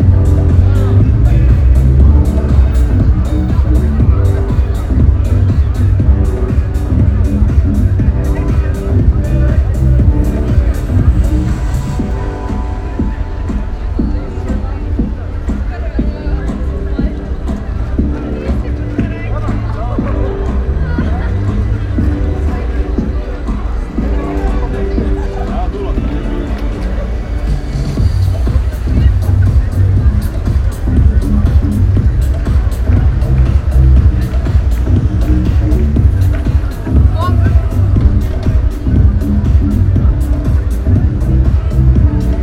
infront ex-casino hause, Glavni trg, Maribor - saturday night